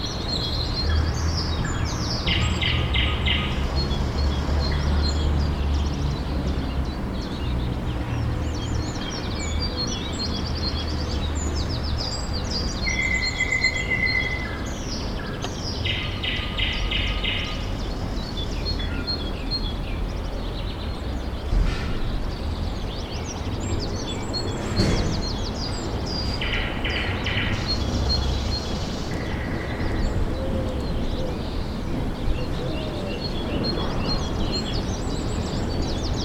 jindrisska 18. inner yard
In the inner yard at Jindřišská street sings a nightingale, though just from the speakers placed in the passage. The yard is hosting the flower shop.